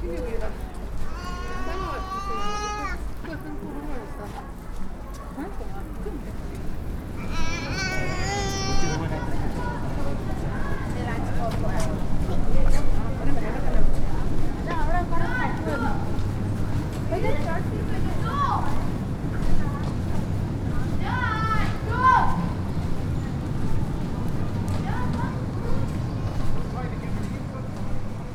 The Old Market, Hereford, UK - sequence
A sequence of sounds captured during a walk in the city centre of Hereford. I start in the Old Market, then to the High Road shopping area, through the Cathedral and finally Bishop's Mradow and King George V Playing fields.
MixPre 3 with 2 x Rode NT5s
England, United Kingdom, April 2019